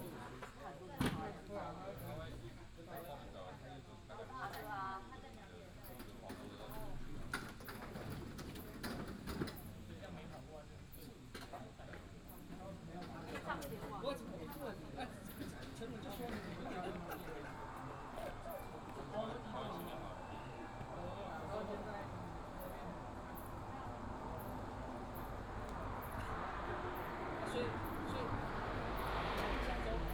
Xindian, Shitan Township, Miaoli County - Parking lot
Parking lot in convenience store, traffic sound, Many high school students gather in chat, Many heavy motorcycle enthusiasts gather here to chat and take a break, Binaural recordings, Sony PCM D100+ Soundman OKM II
1 November 2017, ~21:00